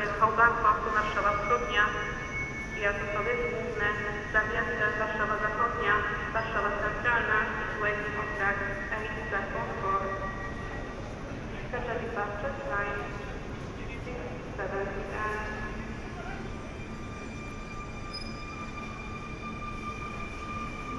Recording from a train platform no. 4, close to the announcements speaker.
Recorded with DPA 4560 on Tascam DR-100 mk3.